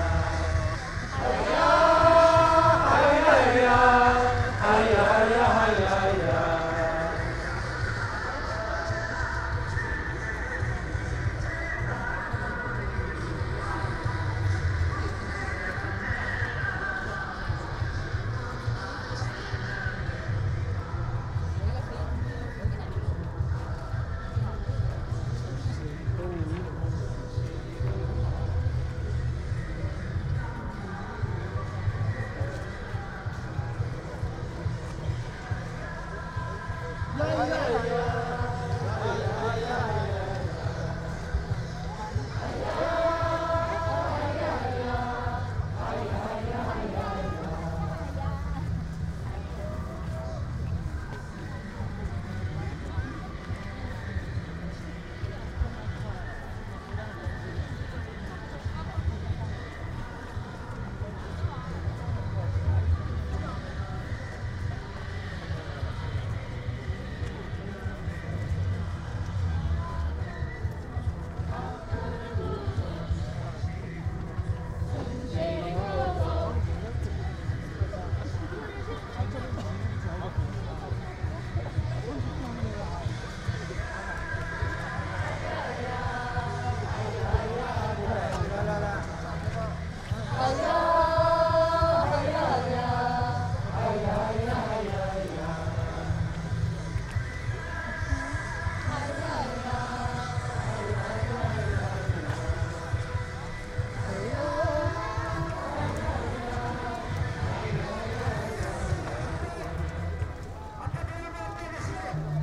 One week after 500,000 - 750,000 people protested in Seoul several thousand (?) people marched to protest corruption and mis-use of power allegations against Korean president Park Geun-hye. Sonically the huge protests in Seoul were dominated by broadcasts from large sound systems and a good sound recording of the crowd was not possible. Here, in this regional city, the voices of the crowd chanting and calling out could be well heard, and made for a powerful representation of real popular sentiment. In this recording at least two protest chants can be heard.
19 November, 20:00